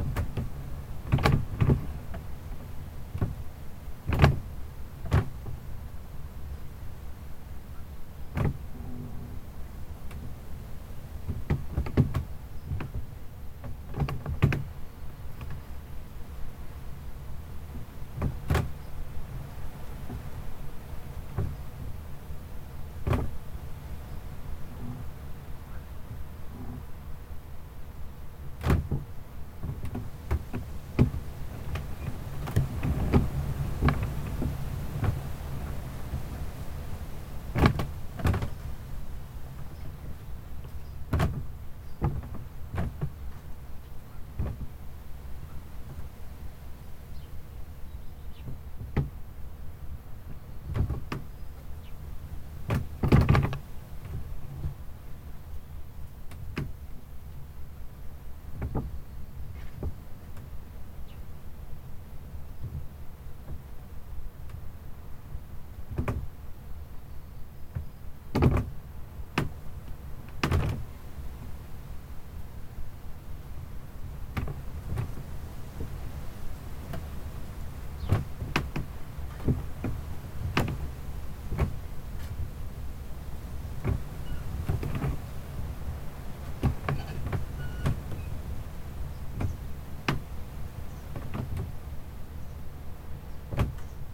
A combined stereo field and dual contact microphone recording of a wooden outdoor toilet interior, creaking against the pressure of wind. Contact microphone input is boosted, accentuating the character of wooden constructions brushing against each other.
Lazdijų rajono savivaldybė, Alytaus apskritis, Lietuva, 5 June 2020, 16:30